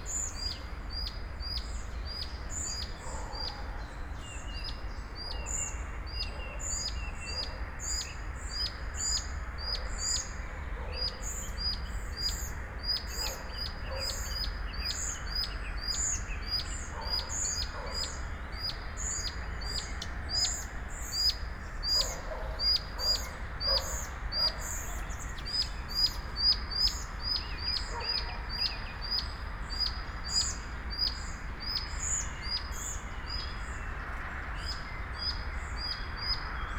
path of seasons, forest, piramida - hightone bird